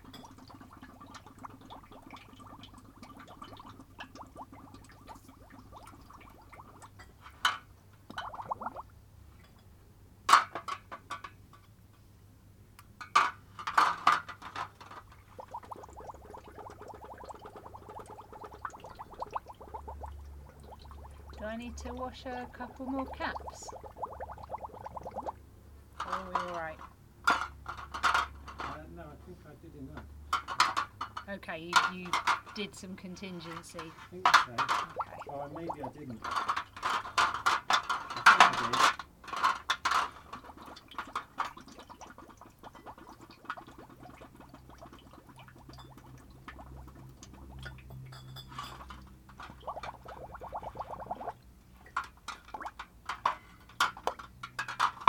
This is the sound of us bottling up a batch of beer. The first job involves sterilising all the bottles, so the bubbling sound is me filling up loads of glass bottles with sterilising solution. As soon as I heard the first bottle glugging, I thought "this is a lovely sound! I want to record it for World Listening Day!" So I went and got the recorder. You can hear some chit chat about that; then we bicker about Mark drinking extra beer out of some of the bottles to get the liquid levels right; we fiddle around with the fancy bottle-capping device. Traffic moves on the street, very slowly, you can hear the wonderful birds in our neighbourhood, mostly sparrows in this recording. All the timings are made by our work together as we sterilise the bottles, fill them with beer, cap the bottles then rinse them down. It's a batch of 30 bottles.
Reading, UK, 18 July 2014, 19:41